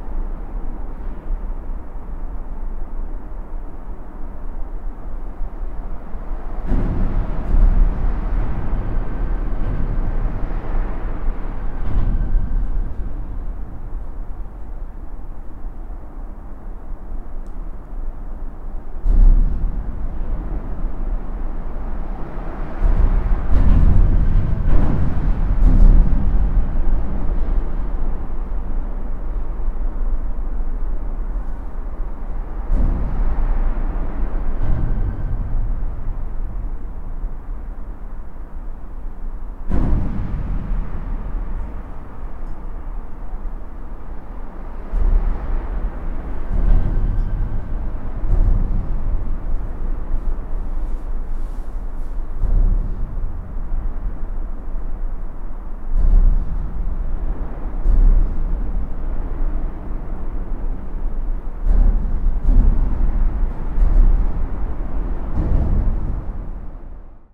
Hafenviertel, Linz, Österreich - unter der autobahnbrücke
unter der autobahnbrücke, linz
Linz, Austria, January 2015